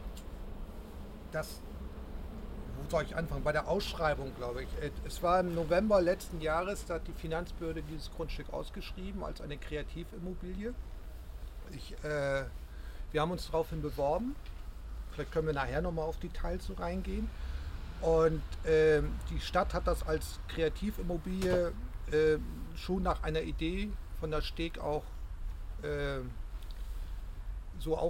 Das Grundstück in der Eifflerstraße ist von der Finanzbehörde Hamburg als Kreativimmobilie ausgeschrieben worden. Kurt Reinke (STEG) erläutert dem Gartenkunstnetz das Kaufangebot und den Bebauungsplan der STEG.
Schanzenviertel